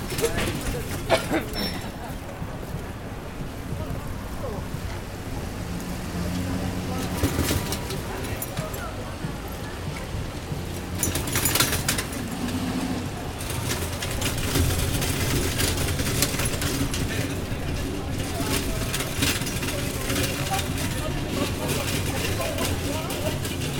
{"title": "Mott St, New York, NY, USA - A drum being pushed through the streets of Chinatown, Year of the Dog", "date": "2018-02-16 15:35:00", "description": "I'm walking next to a group of performers carrying a Chinese drum and a dragon costume through the streets of Chinatown, NY.\nThe sounds are coming from the wheels of the drum being pushed.\nThis group is going to Mott Street to perform and bless the local businesses.\nChinatown, NYC\nZoom H6", "latitude": "40.72", "longitude": "-74.00", "altitude": "10", "timezone": "America/New_York"}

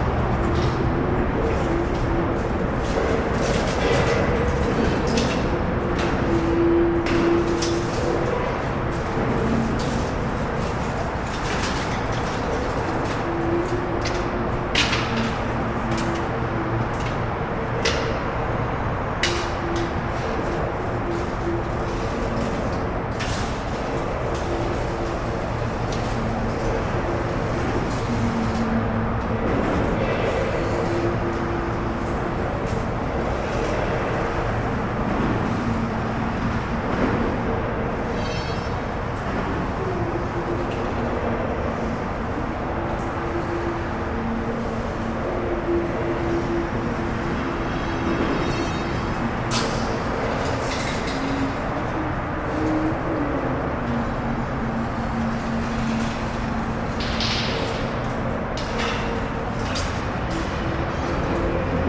{"title": "down in the tunnels under the highway, Austin TX", "date": "2010-03-19 19:26:00", "description": "working with the acoustic space of some drainage tunnels under the highway interchange near downtown Austin", "latitude": "30.28", "longitude": "-97.77", "altitude": "146", "timezone": "Europe/Tallinn"}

